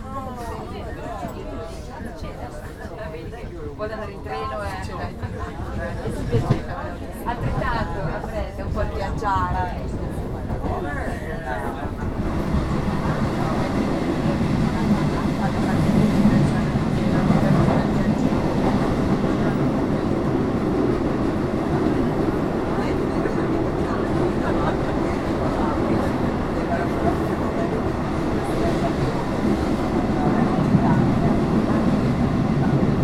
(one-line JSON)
{"title": "Rapallo, Genua, Italien - Zugfahrt nach Sestri Levante", "date": "2014-04-02 11:27:00", "description": "Zugfahrt von Camogli nach Sestri Levante. Lebhafte Diskussionen der Zugreisenden. Tunnelgeräusche und Durchsage 'nächster Halt: Rapallo' (natürlich auf italienisch...)", "latitude": "44.35", "longitude": "9.23", "altitude": "8", "timezone": "Europe/Rome"}